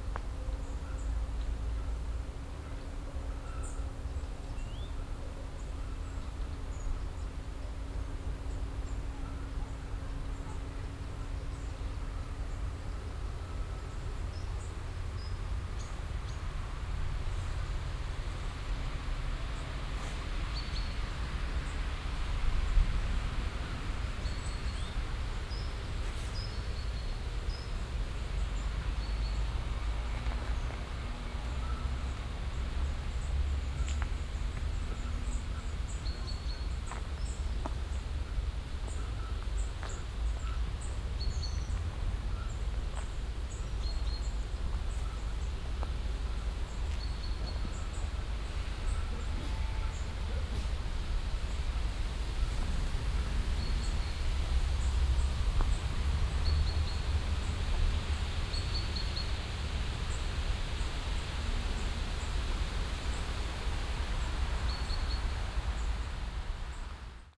kinzweiler, kinzweiler burg, weg unter bäumen
nachmittags unter birken und weiden auf weg zur burg, leichte winde bewegen die umgebenden blätter, verkehr von der strasse im hintergrund
soundmap nrw: social ambiences, topographic field recordings